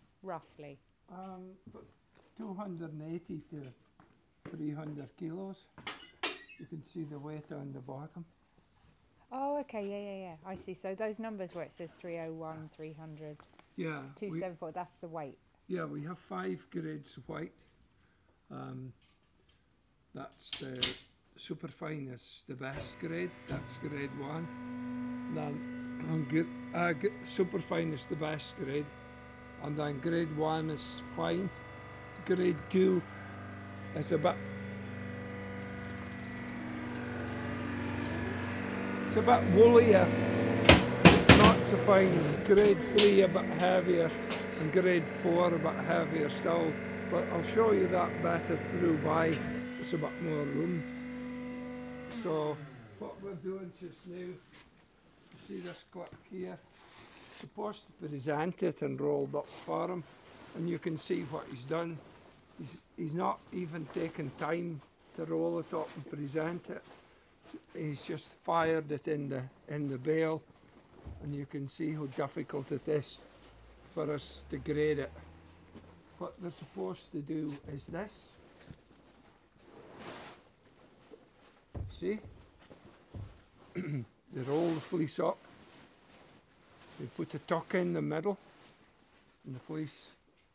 Jamieson & Smith, Shetland Islands, UK - Oliver Henry talking about the way wool should be presented to the wool grader, and wool being baled by the enormous baling machine
This is Oliver Henry (a wool sorter for 46 years) showing me some of the wool that has been sent in for sorting and grading. We are in the wool shed at Jamieson & Smith, surrounded by huge bags filled with fleeces fresh from the crofts; bales of sorted wool, ready to be taken for scouring and spinning; and the 1970s baling, which compresses roughly 300kg of wool into each big bale. Oliver is talking about how the wool should be presented when it is given in to the wool brokers for grading and sorting, and we are looking at some fleeces which have been sent in all in a jumble. Shetland sheep have quite varied fleeces, and you might have very fine wool in one part of the fleece but rougher wool in another; the rougher stuff gets graded in a certain way and mixed with other wool of a similar grade, to make carpets and suchlike. The softer stuff gets graded differently, and mixed with other wool of a similar high quality.
6 August 2013, 15:07